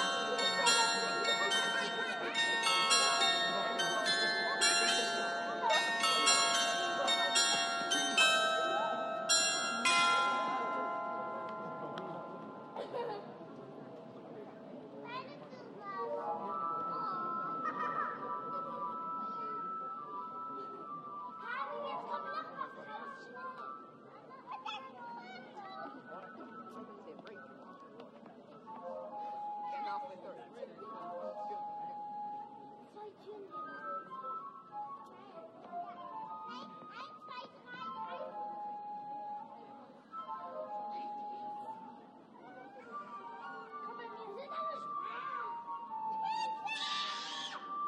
Am Markt, Hameln, Germany PIED PIPER CHIMES PLAY (Rattenfänger Glockenspiel) - PIED PIPER CHIMES PLAY (Rattenfänger Glockenspiel)
Sound Recording of "PIED PIPER CHIMES PLAY" (Rattenfänger Glockenspiel) in the center of Hameln every morning. Tourist attraction based of history of Hameln and Pied Piper story with rats. These bells represent part of the story. In the sound recording is heard the performance of bells & pied piper flute.
Recorded with my first recorder ZOOM H4n PRO
External Binaural Microphones